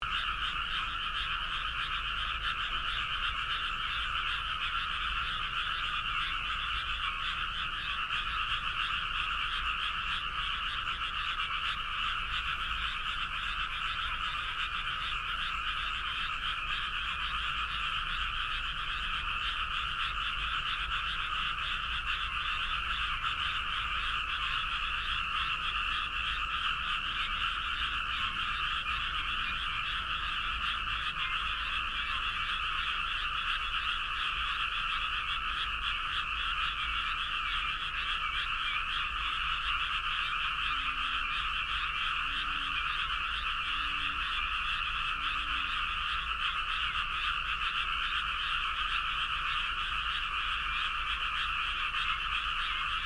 near frogs
愛知 豊田 frog